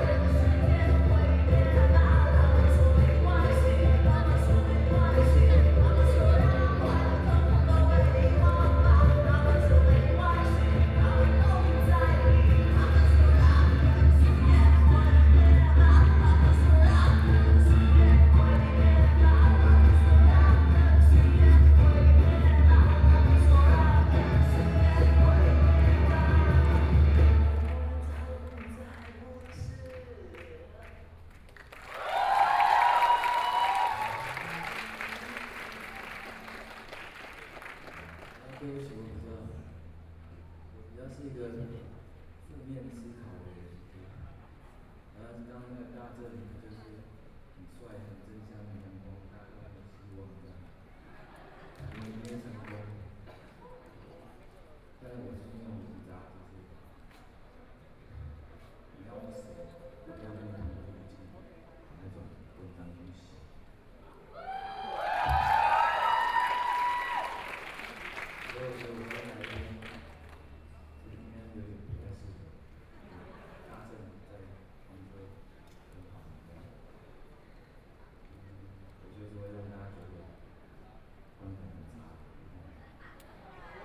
Legislative Yuan, Taiwan - occupied the Legislative Yuan
Student activism, students occupied the Legislative Yuan（Occupied Parliament）